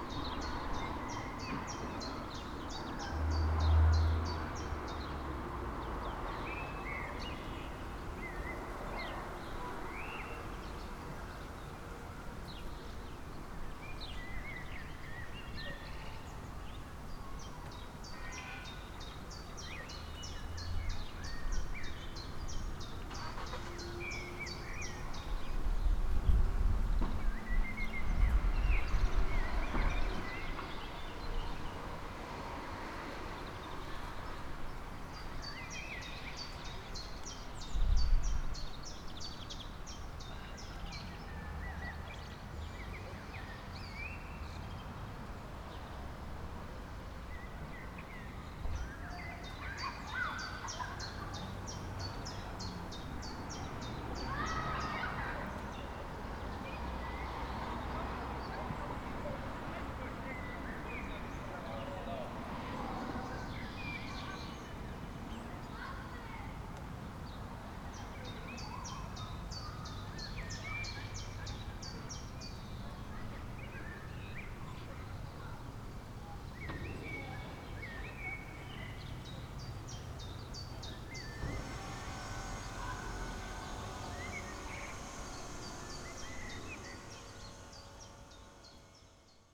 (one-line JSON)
{"title": "Beselich, Niedertiefenbach - quiet village ambience", "date": "2014-04-22 16:30:00", "description": "a well know place from long ago. villages's ambience, afternoon in spring, from slightly above.\n(Sony PCM D50, Primo EM172)", "latitude": "50.44", "longitude": "8.13", "altitude": "203", "timezone": "Europe/Berlin"}